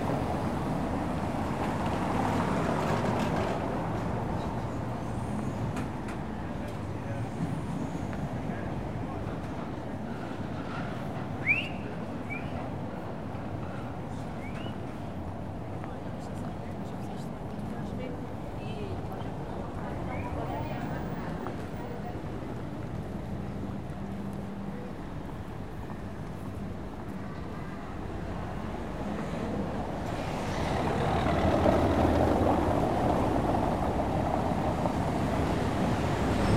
R. da Sé, Angra do Heroísmo, Portugal - Rua da Sé
A small soundwalk on a normal summer day on the busiest street in the city. Recorded with Zoom Hn4 Pro.
Açores, Portugal